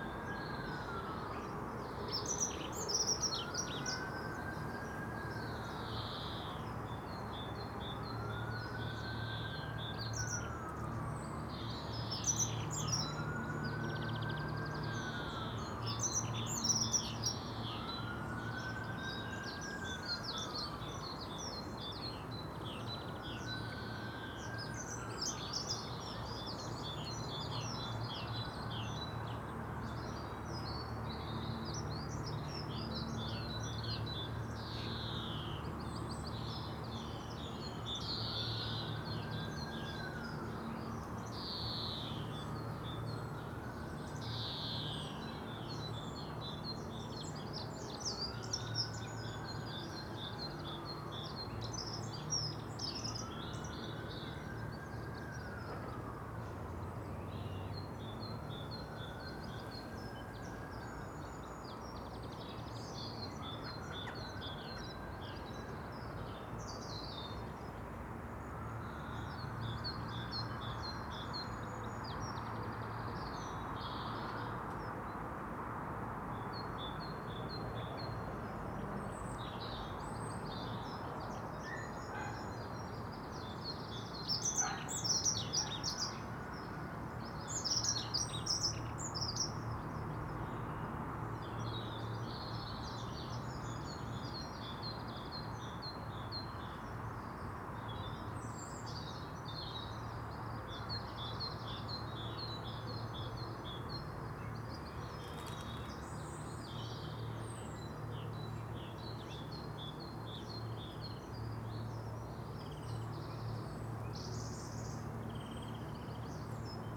Contención Island Day 78 outer north - Walking to the sounds of Contención Island Day 78 Tuesday March 23rd
The Poplars Roseworth Crescent The Drive Church Road
Alarm train siren car plane saw
I feel pressed
to the back of the churchyard
Tumbled headstones
graves grown with inadvertent pollards
air of half-managed neglect
Blackbird drops from bush to grass
crow takes a beak of straw
dunnock sings